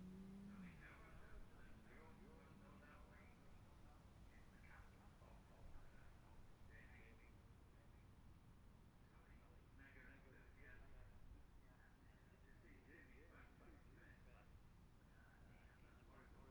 Gold Cup 2020 ... 600 odd and 600 evens pratices ... Memorial Out ... Olympus LS14 integral mics ... real time as such so gaps prior and during the events ...